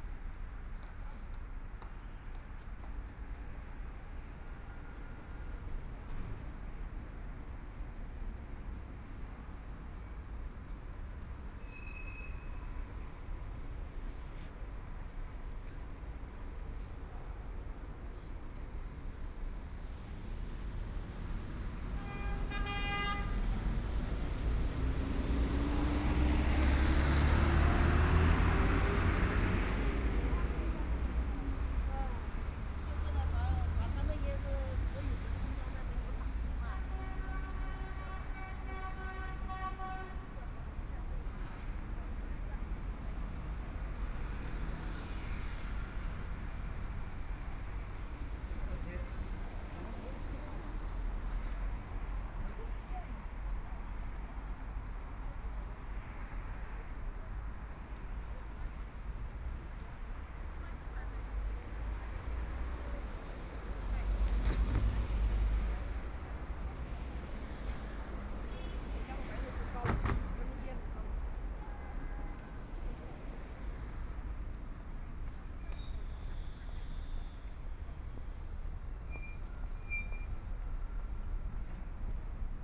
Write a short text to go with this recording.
in the Street, traffic sound, Binaural recording, Zoom H6+ Soundman OKM II